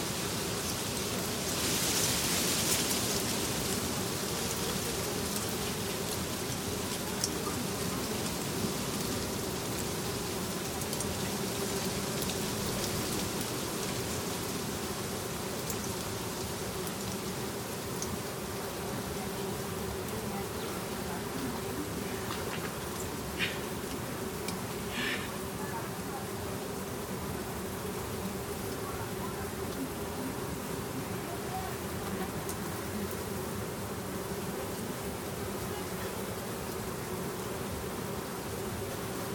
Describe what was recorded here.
Recorded with a pair of DPA4060s and a Marantz PMD661